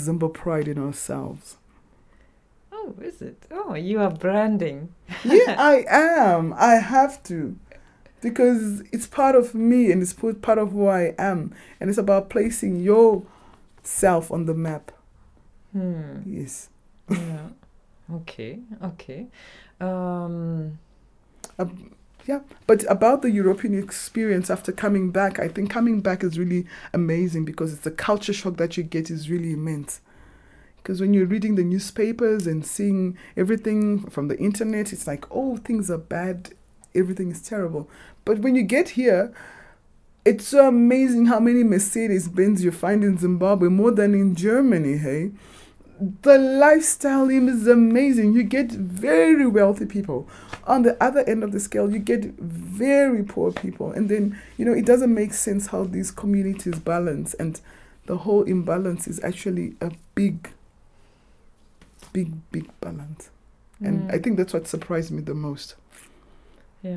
Avondale, Harare, Zimbabwe - Europe is worlds apart...
Ruvimbo stayed for a year in Switzerland on a student exchange. Some of her observations and experiences picture here...
28 August, 15:39